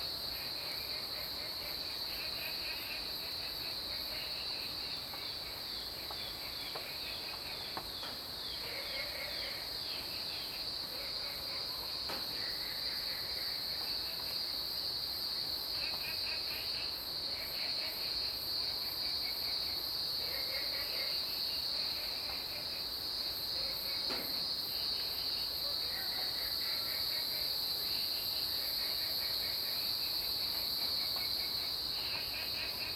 Woody House, 桃米里 Puli Township, Nantou County - in the morning
Frogs chirping, Cicada sounds, Birds singing.
Zoom H2n MS+XY
26 August, 06:15